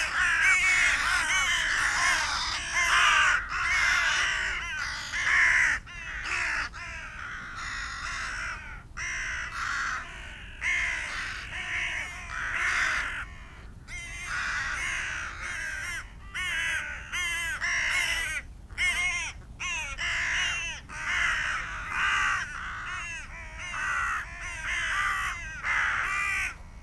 Green Ln, Malton, UK - A gathering of rooks and crows ...
A gathering of rooks and crows ... open lavalier mics clipped to sandwich box ... on the edge of a ploughed field ...